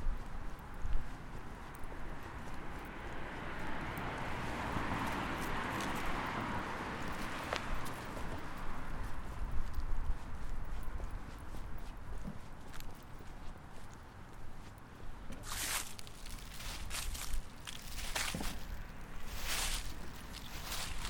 The Glebe, Ottawa, ON, Canada - Walking With Sounds of a Ladder
Recorded with an H5 portable microphone on a pedestrian pathway close to a busy roadway. The metal tapping at the beginning was a painter on a ladder, which was later followed by regular car and walking sounds. It was an extremely windy day, so it wasn't optimal conditions for recording.
24 October 2016, 16:10